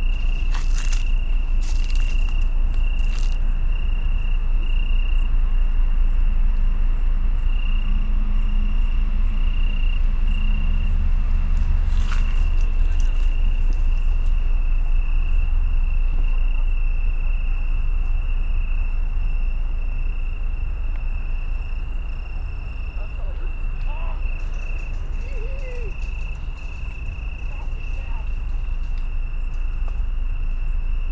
Rheinufer, Köln, Deutschland - Italian tree crickets, ship drone
Weinhähnchen (Italian tree cricket, Oecanthus pellucens) near river Rhein, Köln, very deep drone of a ship passing by
(Sony PCM D50)
Nordrhein-Westfalen, Deutschland, 31 July, 23:15